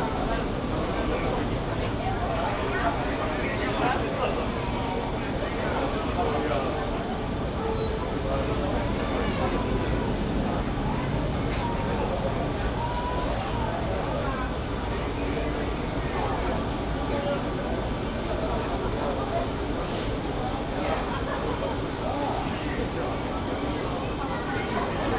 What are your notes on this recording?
waiting for the shuttle to pick the passengers to the plane. talks, hiss of the nearby plane